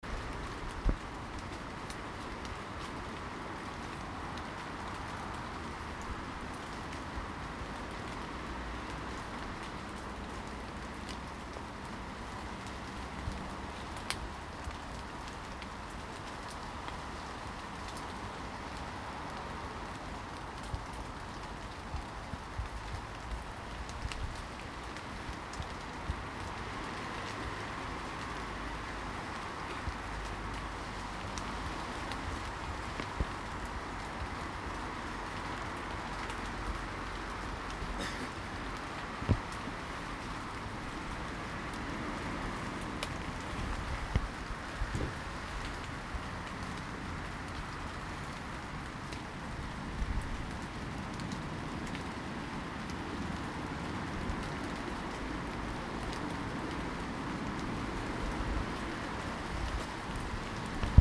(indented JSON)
{
  "title": "Warwick St, Newcastle upon Tyne, UK - City Stadium, Heaton/Shieldfield",
  "date": "2019-10-13 15:00:00",
  "description": "Walking Festival of Sound\n13 October 2019\nRaindrops in trees on City Stadium",
  "latitude": "54.98",
  "longitude": "-1.60",
  "altitude": "37",
  "timezone": "Europe/London"
}